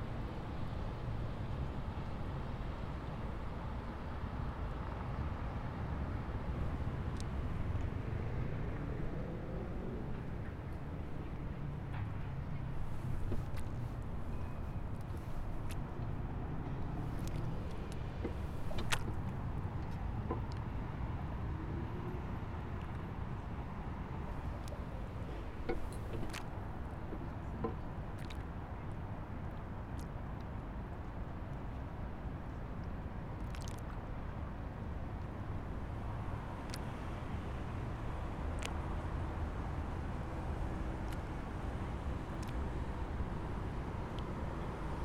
De Berlagebrug, Amsterdam, Nederland - Na de brug.../ After the bridge...
(description in English below)
Er is veel verkeer op de Amsterdamse Berlagebrug. Nadat we via de brug het water waren overgestoken, gingen we via een trap richting de steiger aan het water, hier zit een roeivereniging. Het geluid verandert snel van zeer luidruchtig naar rustig waarbij voornamelijk het geluid van het water en de wind te horen zijn. In de verte is het verkeer van de brug nog wel hoorbaar.
There's a lot of traffic on the Berlage bridge in Amsterdam. After we passed the bridge we went downstairs towards the wharf, there's a rowing club. The sound changes quickly from an uproar to a stillness environment with only the sound of the water and the wind. You can hear the traffic from a distance.
Amsterdam, The Netherlands, 20 September, 16:00